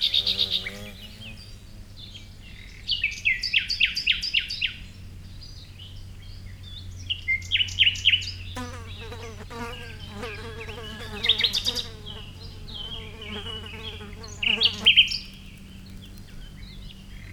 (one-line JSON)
{"title": "Nova vas, Miren, Slovenia - Birds", "date": "2020-05-17 09:50:00", "description": "Recorded in a forest near small valley. Recorded with ZOOM H5 and LOM Uši Pro, Olson Wing array. Best with headphones.", "latitude": "45.85", "longitude": "13.60", "altitude": "192", "timezone": "Europe/Ljubljana"}